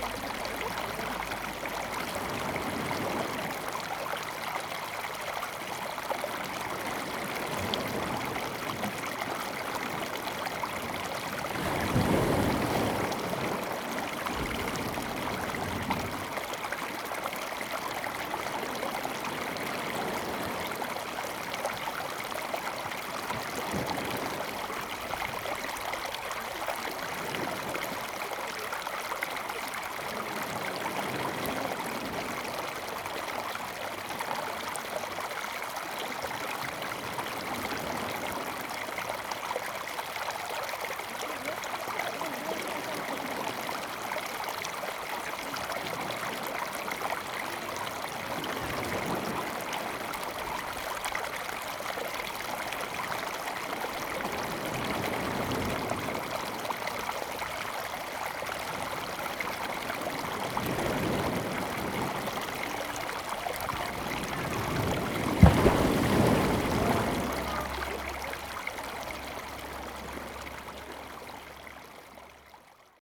磯崎村, Fengbin Township - Waves and tourists
Waves and tourists, Sound streams, Very Hot weather
Zoom H2n MS+XY
Hualien County, Fengbin Township, 花東海岸公路, August 2014